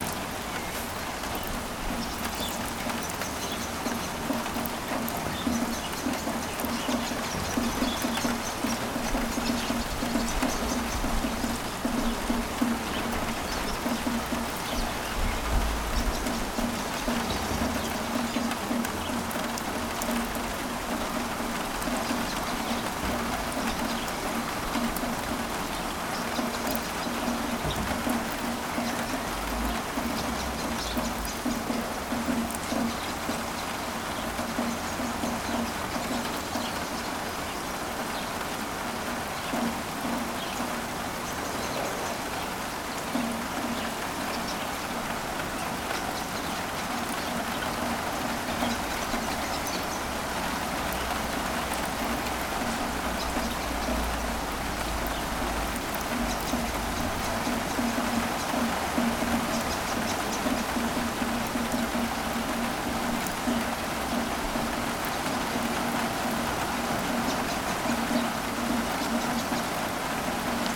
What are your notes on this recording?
Heavy rainfall all over the day in Pirovac, raindrops dripping on the roof and against the windows